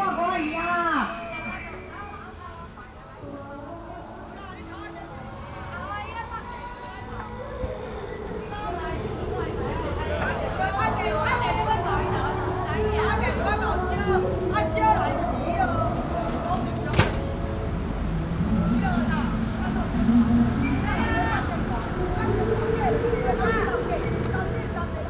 Yancheng, Kaohsiung - Taiwanese (folk) opera